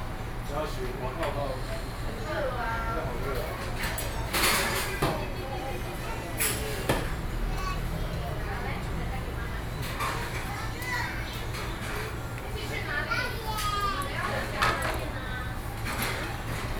In the restaurant, Sony PCM D50 + Soundman OKM II